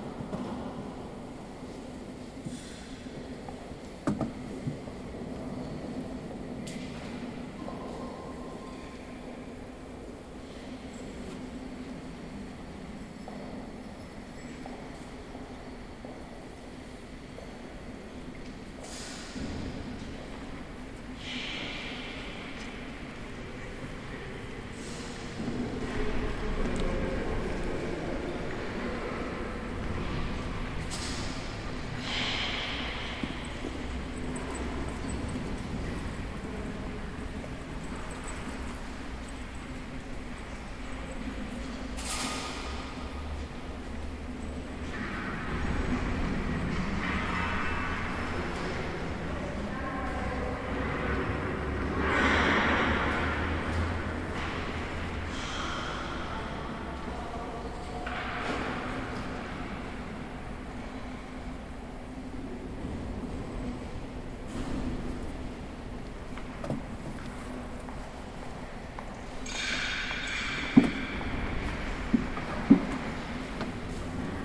Kapitol, Katedrala
inside of the kathedral, after the celebration
9 June 2010, ~19:00